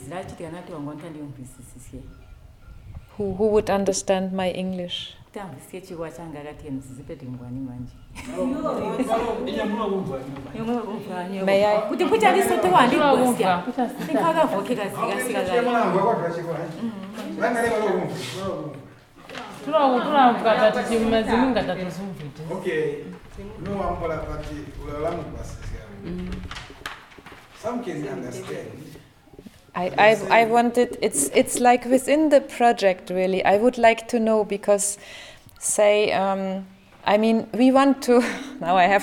Sikalenge Social Centre, Binga, Zimbabwe - who will be listening to us...?
…i’m introducing the documentation project to the women of Sikalenge Women’s Forum… in each of our meetings with one Zubo’s six Women’s Forums, we were taking time for this introduction so that our project would slowly take root in the communities at large…
Zubo Trust is a women’s organization bringing women together for self-empowerment.